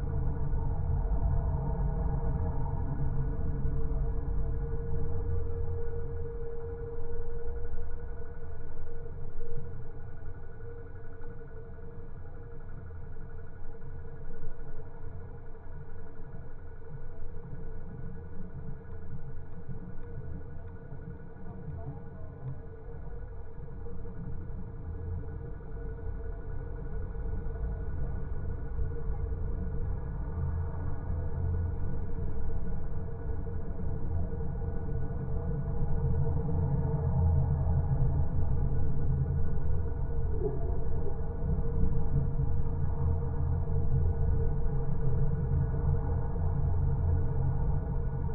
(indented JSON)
{
  "title": "Metallic Bridge - Geofon recording - 1800-255 Lisboa, Portugal - Metallic Bridge - Geofon recording",
  "date": "2020-10-15 16:45:00",
  "description": "Geofon recording of a pedestrian metallic bridge, over a busy highway. Recorded with a zoom H5 and a LOM Geofon.",
  "latitude": "38.76",
  "longitude": "-9.12",
  "altitude": "92",
  "timezone": "Europe/Lisbon"
}